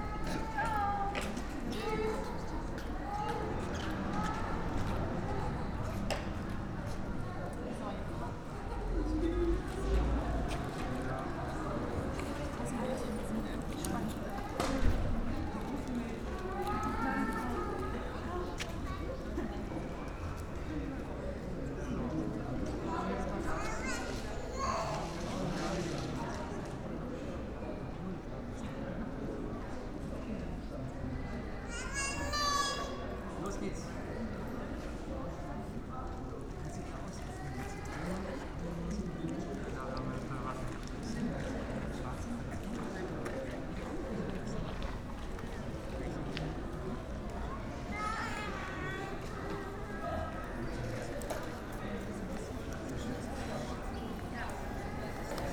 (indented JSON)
{
  "title": "Sanderstraße, Berlin, Deutschland - car-free Sunday afternoon",
  "date": "2021-06-06 15:20:00",
  "description": "listening to street sounds, Sunday afternoon early Summer, all cars have temporarily been removed from this section of the road, in order to create a big playground for kids of all ages, no traffic, no traffic noise, for an afternoon, this street has become a sonic utopia.\n(Sony PCM D50, Primo EM172)",
  "latitude": "52.49",
  "longitude": "13.43",
  "altitude": "47",
  "timezone": "Europe/Berlin"
}